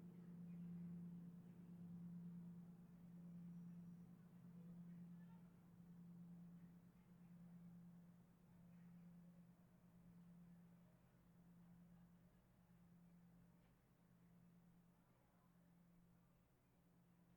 Carillon de l'abbatiale de St-Amand-les-Eaux - Abbatiale de St-Amand-les-Eaux
Abbatiale de St-Amand-les-Eaux
Carillon "The Final Countdown"
Maître carillonneur : Charles Dairay
France métropolitaine, France, 10 June 2020